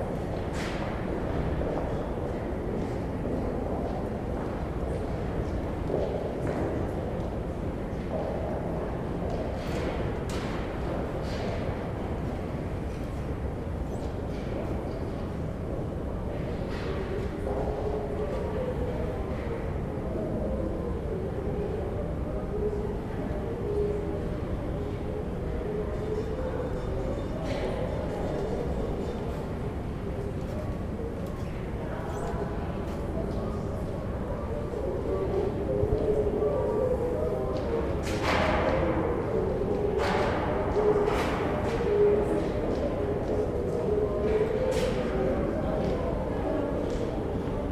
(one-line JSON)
{"title": "Ceska sporitelna bank, interior", "date": "2011-04-03 10:26:00", "description": "inside of the neorenessance building of the Bank, former museum of Klement Gottwald.", "latitude": "50.09", "longitude": "14.42", "altitude": "204", "timezone": "Europe/Prague"}